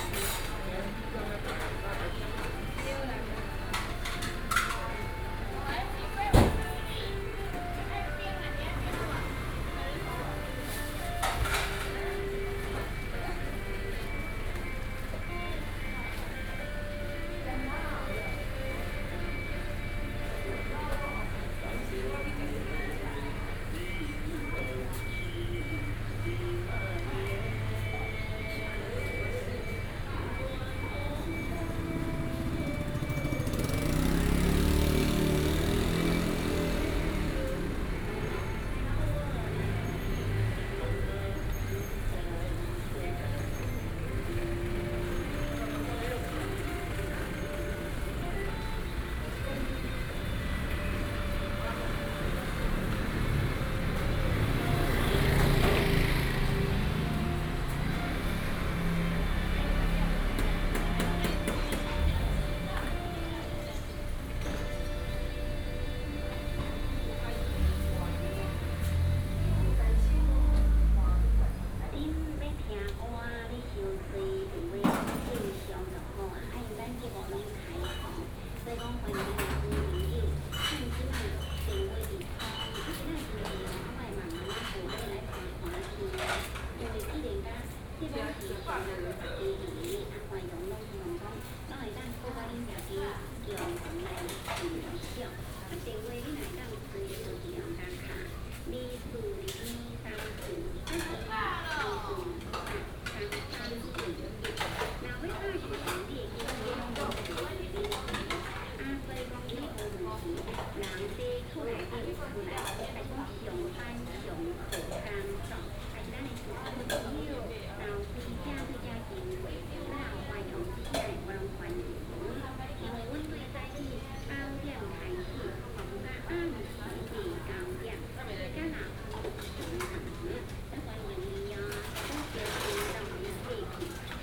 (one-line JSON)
{"title": "Ruifang St., Ruifang Dist., New Taipei City - Vendors", "date": "2012-06-05 14:26:00", "description": "Vendors, Traffic Sound, in a small alley\nSony PCM D50+ Soundman OKM II", "latitude": "25.11", "longitude": "121.81", "altitude": "60", "timezone": "Asia/Taipei"}